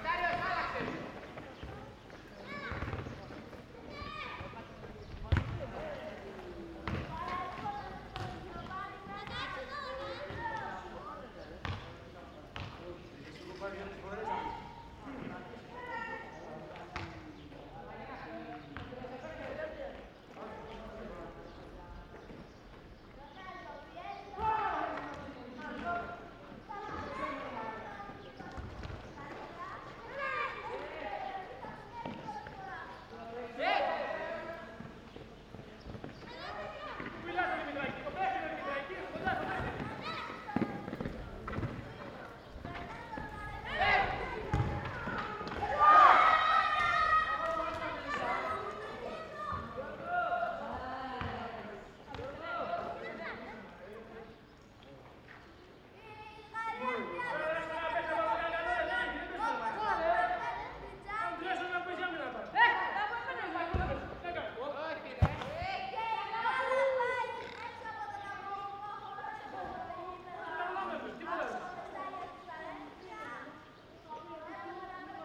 Fourni, Griechenland - Schulhof
Kinder spielen Fussball. Die Insel ist Autofrei.
Mai 2003
Fourni, Greece, 9 May 2003